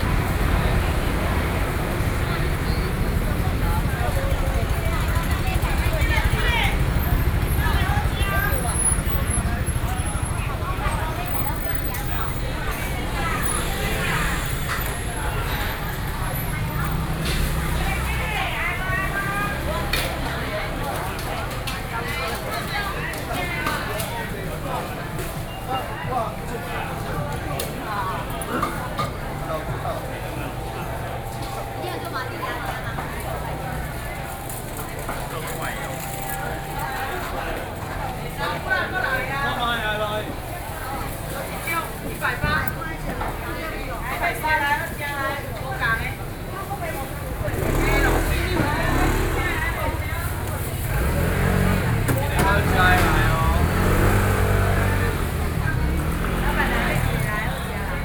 Ln., Sec., Yanping N. Rd., Datong Dist., Taipei City - Traditional markets
Datong District, Taipei City, Taiwan, 4 November 2012